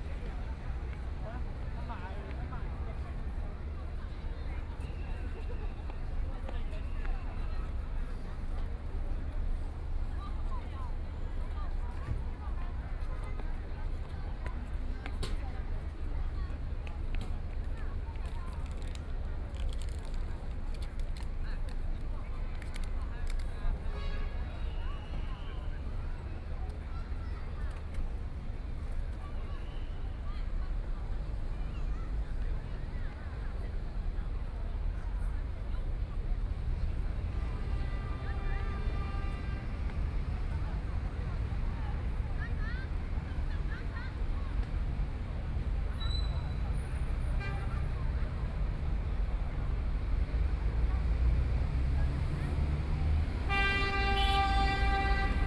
the Bund, Shanghai - Tourist area
the Bund's environmental sounds, Traffic Sound, Bell tower, Very many people and tourists, Binaural recording, Zoom H6+ Soundman OKM II